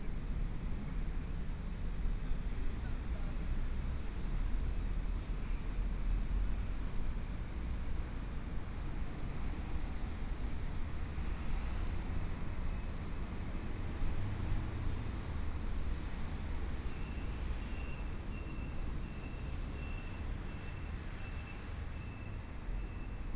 Regent Taipei, Taipei City - in the Plaza
in the Plaza, Environmental sounds, Traffic Sound, Motorcycle Sound, Pedestrians on the road, Binaural recordings, Zoom H4n+ Soundman OKM II